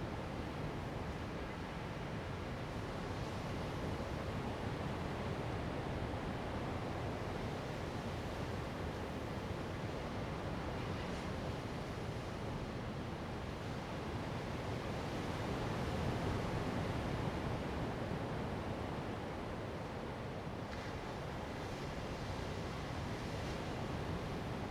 公舘村, Lüdao Township - Next to the coast
behind the rock, sound of the waves
Zoom H2n MS +XY
Taitung County, Taiwan